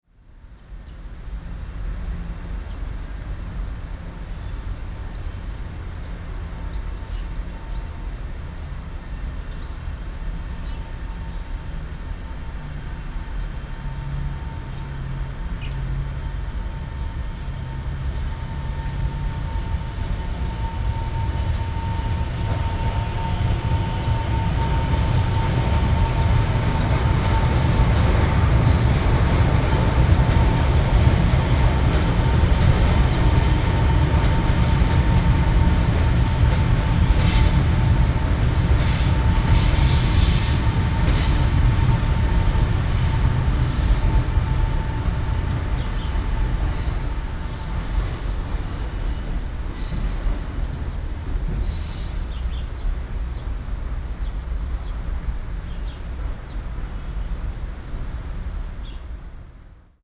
Keelung, Taiwan - Train traveling through
Train traveling through, Sony PCM D50 + Soundman OKM II
台北市 (Taipei City), 中華民國